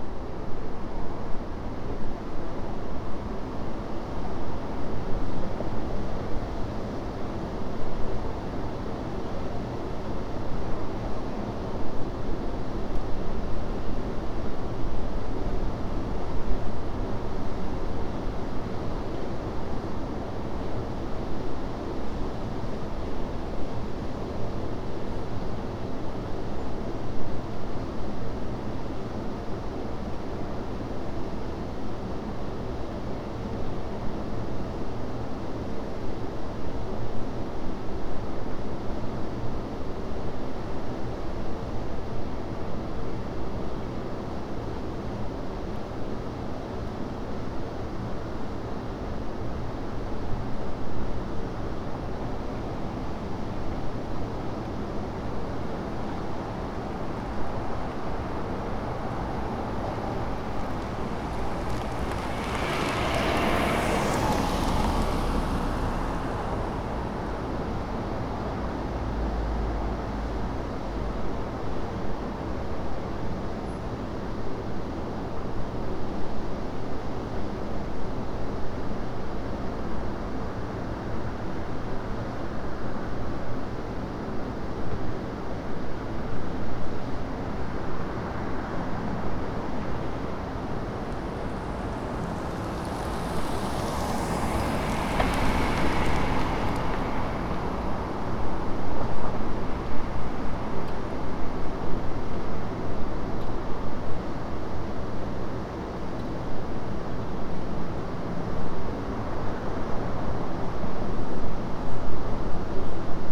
New Paces Ferry Rd NW, Atlanta, GA, USA - In The Middle Of Town
The middle of Vinings, Georgia. The recorder was set down on the sidewalk to capture the general ambiance of the area. The sound of traffic is quite prominent, and cars can be heard driving in close proximity to the recorder. A few sounds can be heard from the nearby shops, including a work team cleaning gutters in the distance. A few people also passed by the recorder on foot. Captured with the Tascam dr-100mkiii.
Georgia, United States of America